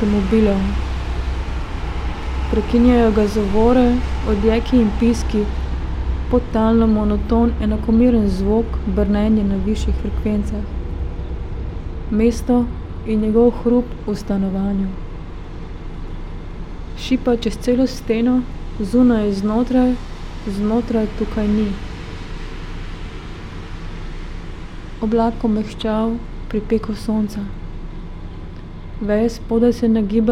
writing reading window, Karl Liebknecht Straße, Berlin, Germany - part 15